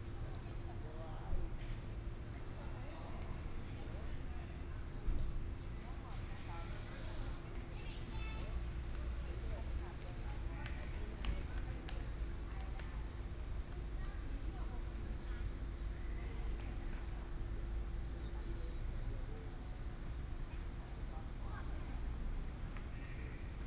in the Park, Traffic sound, sound of birds, Child
Taipei City, Taiwan, 10 April 2017